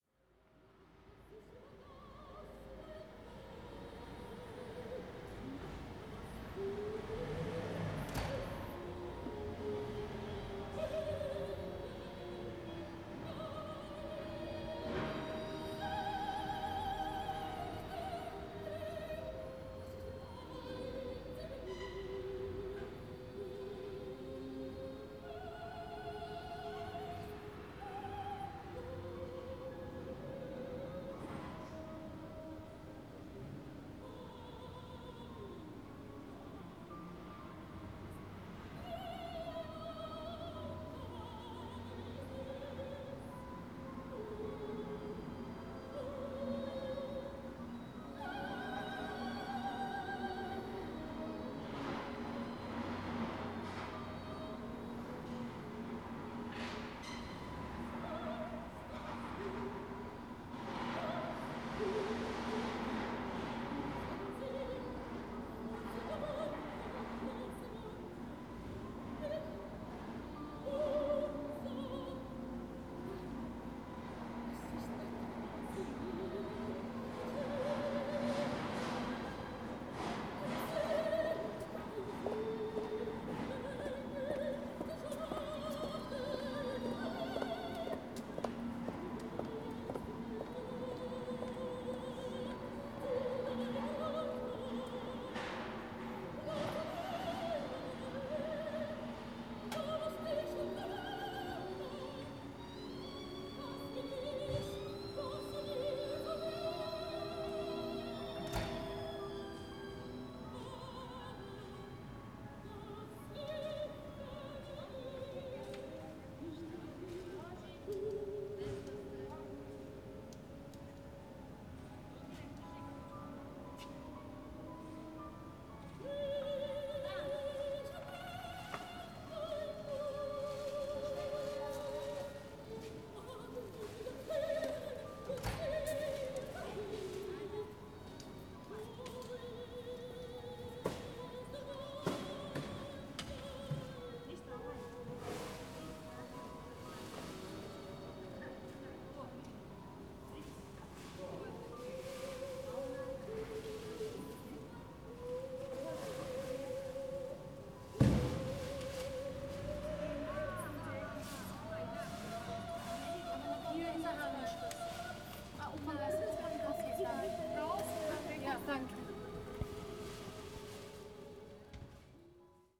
Soundscape in the courtyard in front of the museum.
Vienna, Austria, 18 October, ~15:00